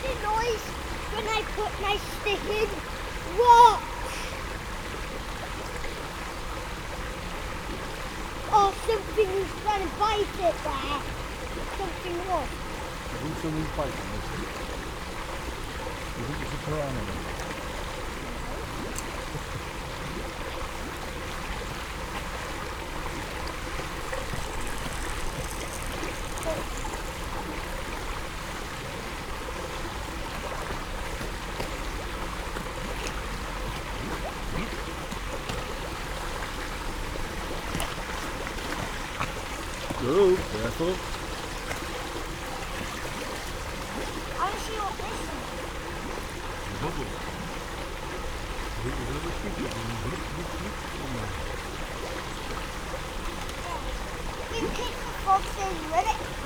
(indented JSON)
{
  "title": "Bondgate Without, Alnwick, UK - overflow and uprising ...",
  "date": "2019-11-05 11:25:00",
  "description": "overflow and uprising ... alnwick gardens ... open lavaliers clipped to sandwich box ... placed above one of four outflows of a large man made pond ... also water welling up from the middle of the pool ... only one chance to record so includes the visits of numerous folk ...",
  "latitude": "55.41",
  "longitude": "-1.70",
  "altitude": "70",
  "timezone": "Europe/London"
}